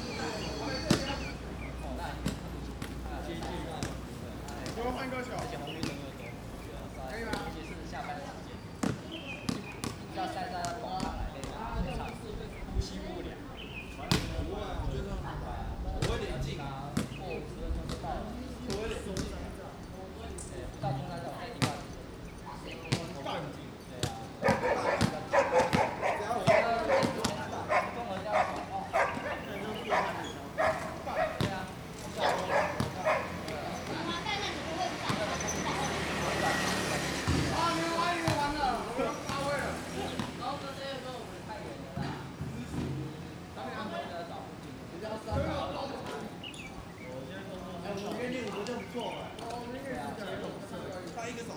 Dianxin St., Sanchong Dist., New Taipei City - Next to the basketball court
Next to the basketball court, Birds singing, Traffic Sound
Sony Hi-MD MZ-RH1 +Sony ECM-MS907
22 June, 19:30